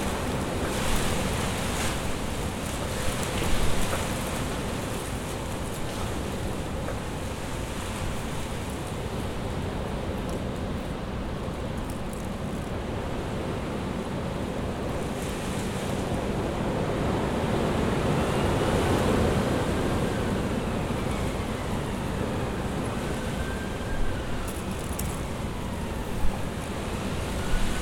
Alpha Court, Raglan St, London, UK - Storm Eunice-London-18th February 2022
Strong gusts of wind interspersed with moments of calm as Storm Eunice passes over Kentish Town. Recorded with a Zoom H4-n